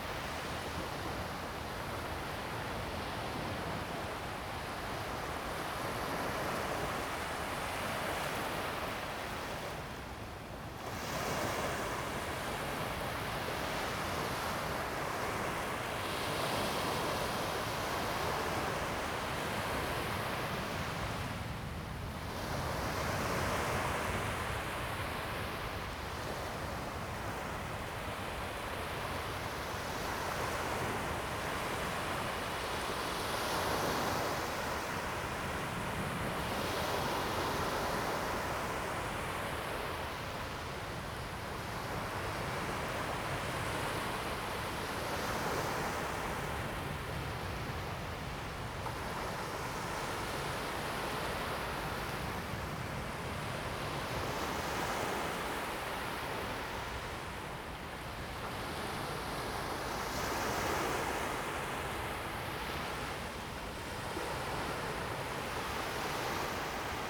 濱海林蔭大道, 新屋區, Taoyuan City - High tide time
High tide time, Late night beach, Sound of the waves, Zoom H2n MS+XY